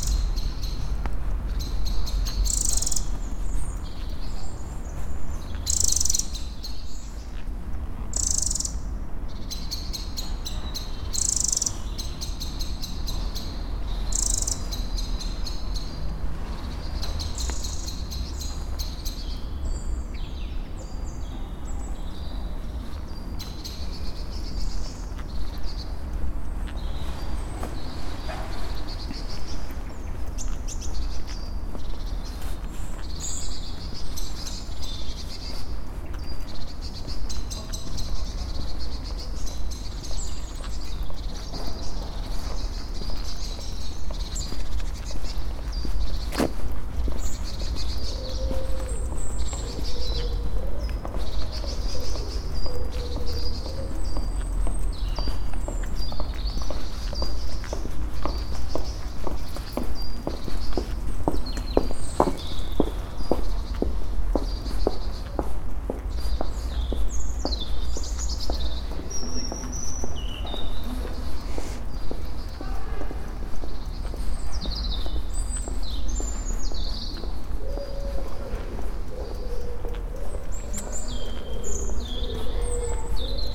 Walk at sunrise through Blumenthalstraße. Birds, a pedestrian, a bicycle passing, pupils meeting in front of a school.
Cologne, Germany, January 2014